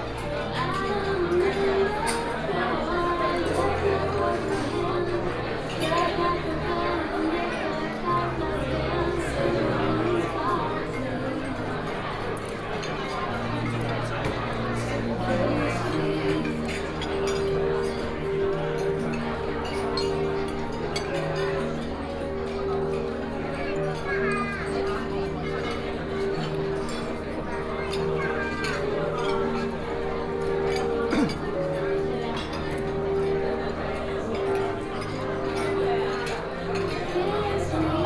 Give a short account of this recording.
in the Wedding restaurant, Binaural recordings, Sony PCM D50+ Soundman OKM II